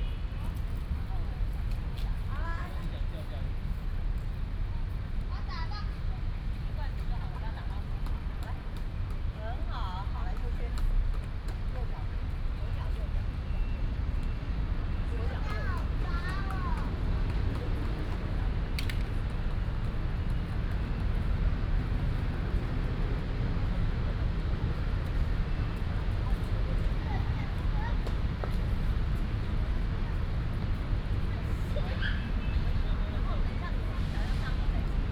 In college, Children are learning to climb a tree
Zhongzheng District, Taipei City, Taiwan, 4 March, ~15:00